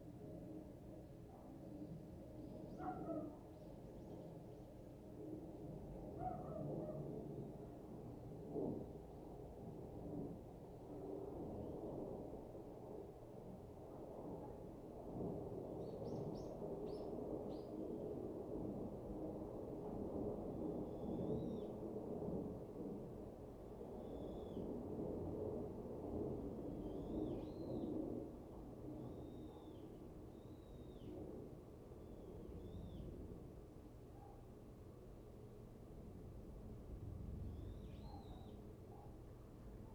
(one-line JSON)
{"title": "Garak-ro, Gimhae-si - Dogs barking", "date": "2014-12-17 12:10:00", "description": "Traditional Korean-style house, Aircraft flying through, Dogs barking\nZoom H2n MS+XY", "latitude": "35.23", "longitude": "128.88", "altitude": "10", "timezone": "Asia/Seoul"}